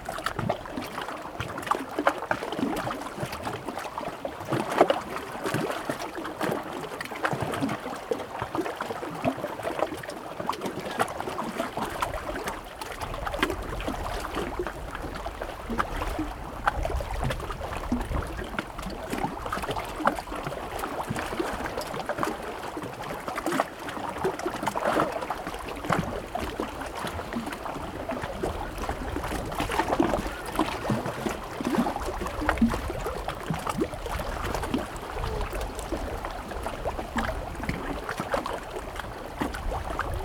Misery Bay, Manitoulin Island, ON, Canada - Misery Bay summer midday
Warm summer day at Misery Bay. Waves on rocky shoreline. Zoom H2n with EQ/levels postprocessing.
Burpee and Mills, ON, Canada, 10 July 2016